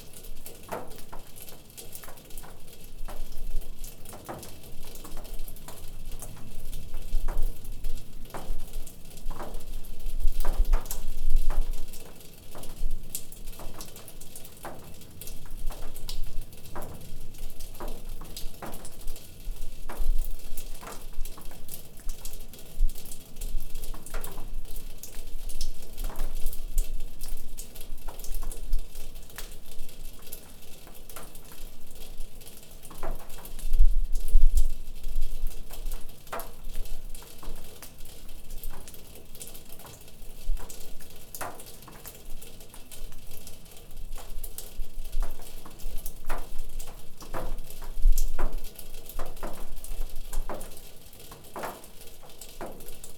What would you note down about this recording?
The snow is melting from the rooftop and celebrates itself in music (Zoom H5-XYH-5)